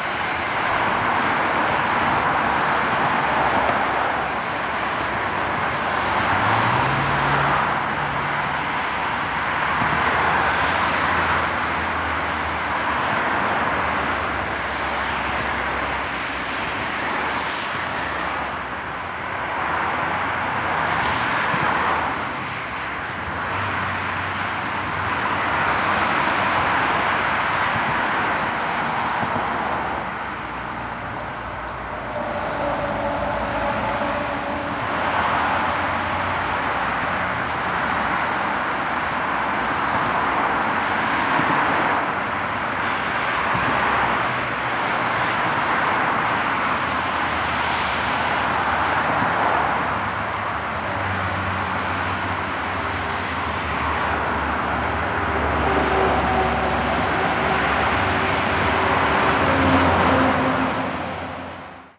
Poznan, overpass at Witosa freeway - above freeway
as usual heavy traffic on the freeway in both directions. all sort of sounds of passing cars. tires roar on the tarmac.